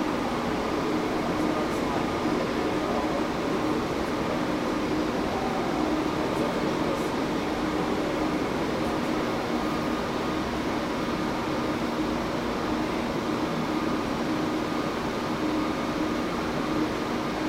atmosphere at modern db tram station frankfurt airport - train driving in
soundmap d: social ambiences/ listen to the people - in & outdoor nearfield recordings